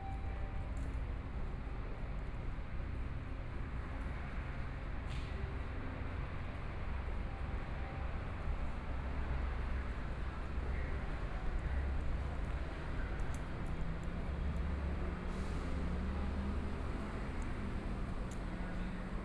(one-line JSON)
{"title": "Zuoying Station, Kaohsiung City - Walking in the station", "date": "2014-05-15 12:46:00", "description": "Walking in the station, Hot weather, Traffic Sound, Take the elevator, Entering the station hall, Toward the station platform", "latitude": "22.68", "longitude": "120.29", "altitude": "12", "timezone": "Asia/Taipei"}